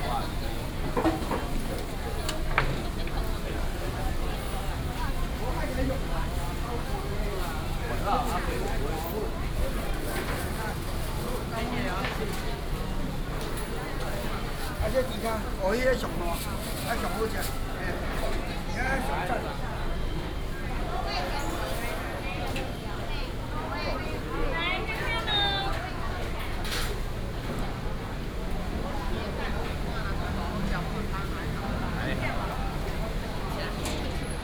Walking in the dusk market, traffic sound, vendors peddling, Traditional Taiwanese Markets, Binaural recordings, Sony PCM D100+ Soundman OKM II